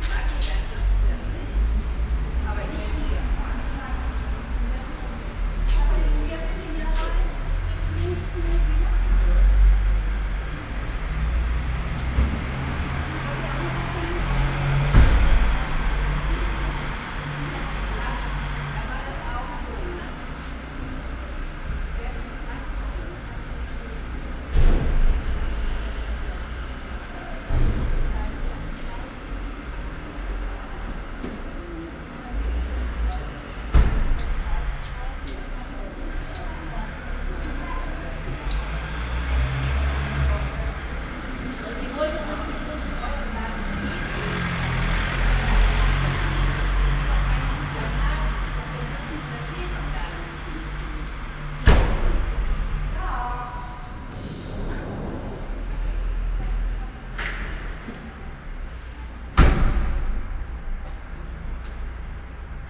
Stadtkern, Essen, Deutschland - essen, kennedy square, parking garage
In einem Parkhaus unter dem Kennedy Platz. Der Klang eines Bohrers und von Fahrzeugen und Haltern resonieren im Betongewölbe.
In a parking garage under the kennedy square. The sound of a driller, cars and people resonting inside the concrete architecture.
Projekt - Stadtklang//: Hörorte - topographic field recordings and social ambiences
Essen, Germany